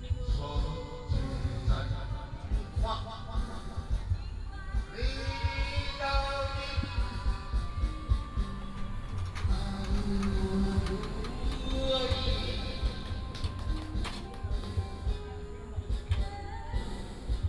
Buhou Rd., Zhuangwei Township, Yilan County - Karaoke
Karaoke, In the river